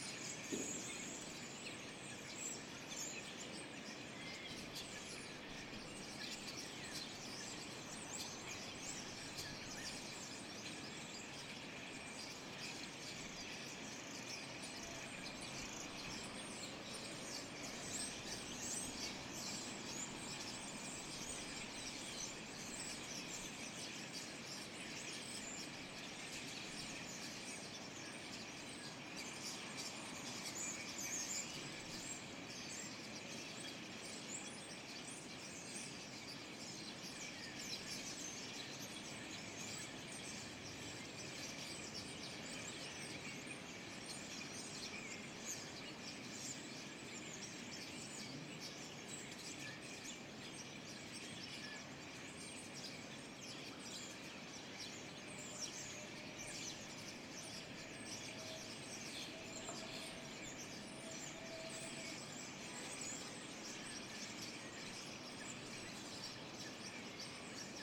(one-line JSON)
{"title": "Kortenbos, Den Haag, Nederland - Starlings gathering", "date": "2013-01-03 18:00:00", "description": "Large group of starlings gather in the trees.\nZoom H2 Internal mics.", "latitude": "52.08", "longitude": "4.31", "altitude": "7", "timezone": "Europe/Amsterdam"}